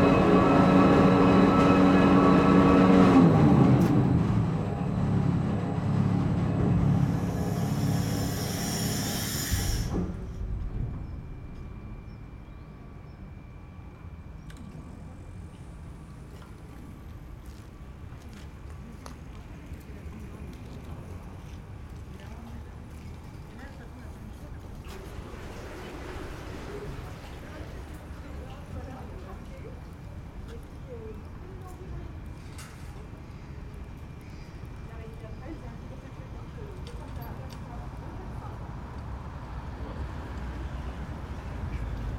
{"title": "Rue de lArmide, La Rochelle, France - Passerelle toute neuve & Confinement 2", "date": "2020-11-12 14:17:00", "description": "En début d'après midi, piétons, cyclistes et vélos circulent dans un calme remarquable lorsque la passerelle tout récemment refaite s'ouvre.....passent 2 catamarans, puis la passerelle se referme.... Belle écoute!\n4xDPA4022, cinela cosi et Rycotte, SD_MixPré6", "latitude": "46.15", "longitude": "-1.15", "altitude": "1", "timezone": "Europe/Paris"}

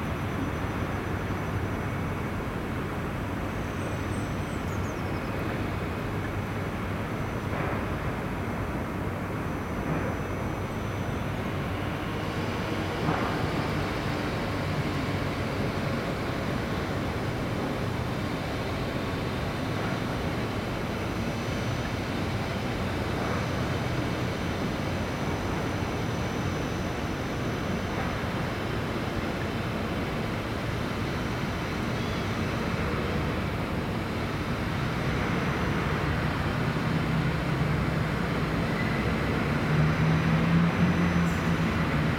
{"title": "Rue du Mont St Martin, Liège, Belgique - City ambience from a rooftop", "date": "2022-03-09 09:58:00", "description": "Bells in the distance, many construction sites nearby, a few birds.\nTech Note : Ambeo Smart Headset binaural → iPhone, listen with headphones.", "latitude": "50.65", "longitude": "5.57", "altitude": "84", "timezone": "Europe/Brussels"}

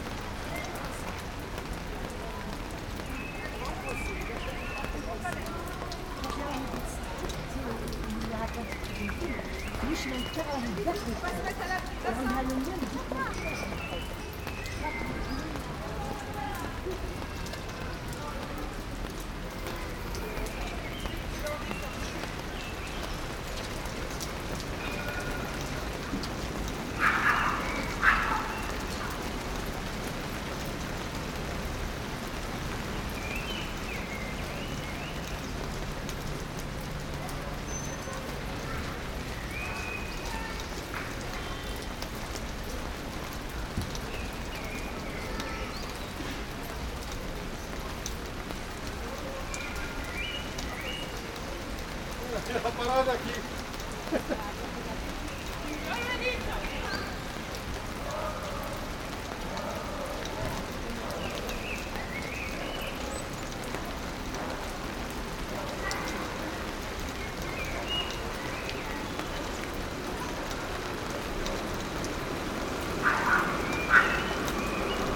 {"title": "Liberation, Nice, France - Binaural rain next to tram line", "date": "2016-02-26 17:13:00", "description": "Standing under a plastic cover sheltering from the rain. Trams pass, people walk by, a bird sings and dogs bark.\nRecorded with 2 Rode Lavalier mics attached to my headphones to give an (imperfect) binaural array, going into a Zoom H4n.", "latitude": "43.71", "longitude": "7.26", "altitude": "27", "timezone": "Europe/Paris"}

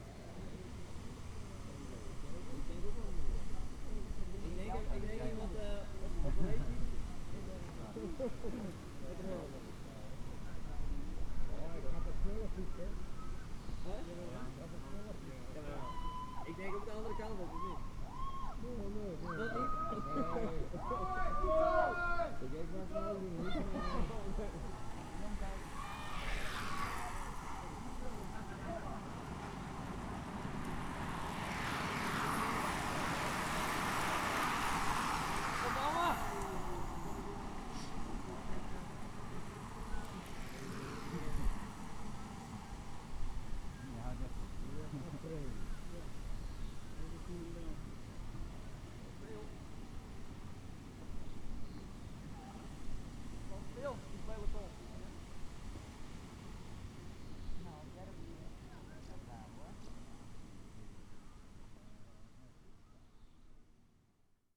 {"title": "workum, aldedijk: schleuse - the city, the country & me: at the lock", "date": "2011-07-02 21:29:00", "description": "cycle race\nthe city, the country & me: july 2, 2011", "latitude": "52.97", "longitude": "5.43", "altitude": "1", "timezone": "Europe/Amsterdam"}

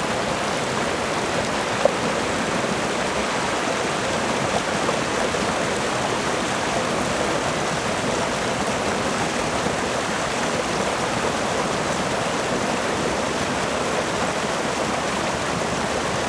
{"title": "Shimen Rd., Tucheng Dist., New Taipei City - The sound of water streams", "date": "2012-02-16 16:52:00", "description": "The sound of water streams\nZoom H4n +Rode NT4", "latitude": "24.96", "longitude": "121.46", "altitude": "67", "timezone": "Asia/Taipei"}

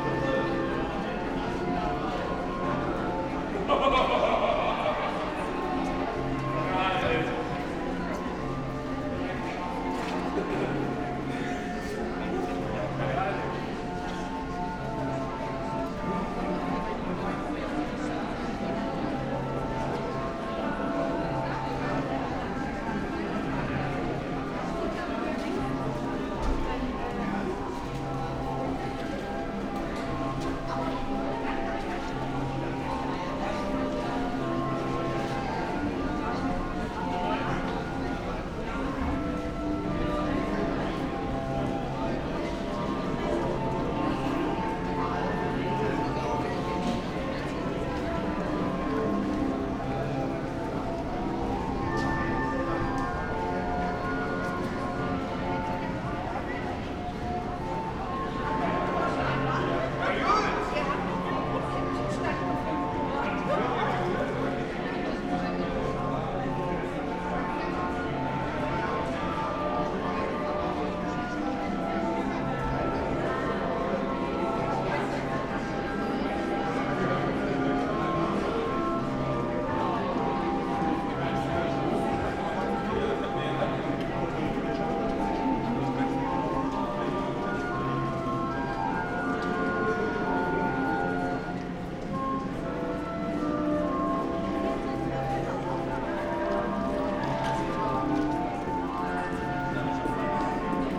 {"title": "Masurenallee, Messe, Berlin, Deutschland - underpass, street organ, pedestrians", "date": "2019-01-27 10:00:00", "description": "Berlin fair, underpass, a street organ is continuously playing, loads of pedestrians passing by, on their way to the international food fair\n(Sony PCM D50, Primo EM172)", "latitude": "52.51", "longitude": "13.28", "altitude": "52", "timezone": "GMT+1"}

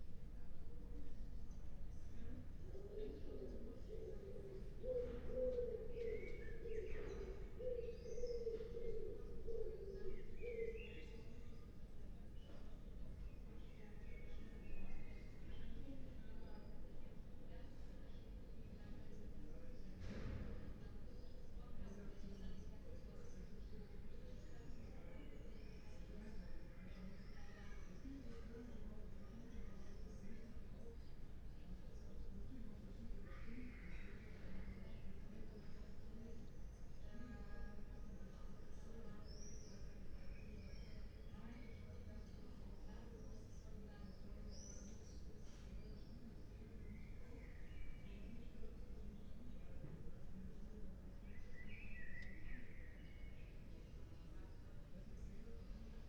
{"title": "Berlin Bürknerstr., backyard window - Hinterhof / backyard ambience", "date": "2021-06-29 20:29:00", "description": "20:29 Berlin Bürknerstr., backyard window\n(remote microphone: AOM5024HDR | RasPi Zero /w IQAudio Zero | 4G modem", "latitude": "52.49", "longitude": "13.42", "altitude": "45", "timezone": "Europe/Berlin"}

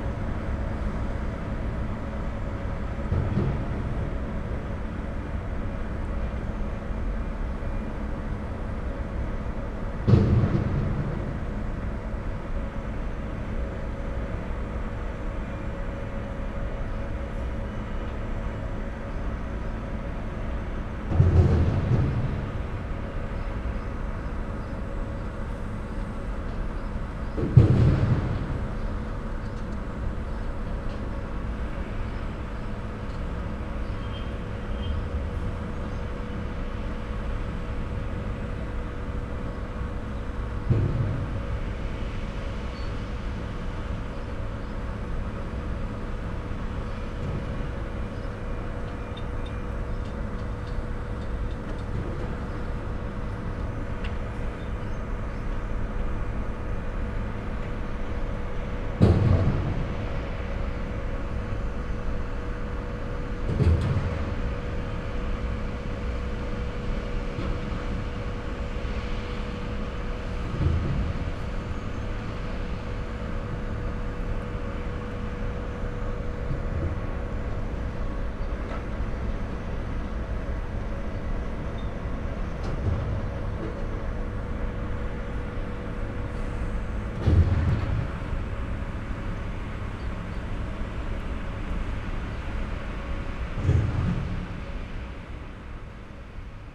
{"title": "Unterhafen, Neukölln, Berlin - industrial ambience", "date": "2013-05-30 14:30:00", "description": "industrial ambience at Unterhafen, Neukölln, near scrapyard. there are some companies in this area busy with recycling and waste disposal.\n(Sony PCM D50, DPA4060)", "latitude": "52.47", "longitude": "13.45", "altitude": "35", "timezone": "Europe/Berlin"}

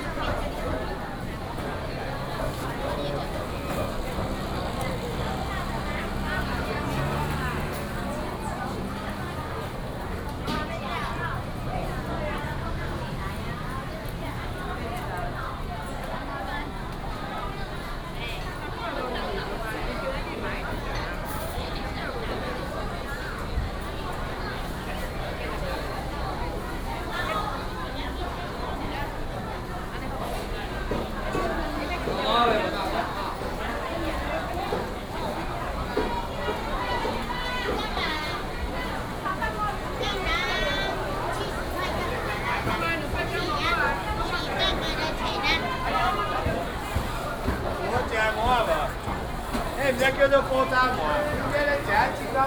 {"title": "鳳山工協市場, Kaohsiung City - Walking in the traditional market", "date": "2018-03-30 09:43:00", "description": "Walking in the traditional market", "latitude": "22.63", "longitude": "120.37", "altitude": "18", "timezone": "Asia/Taipei"}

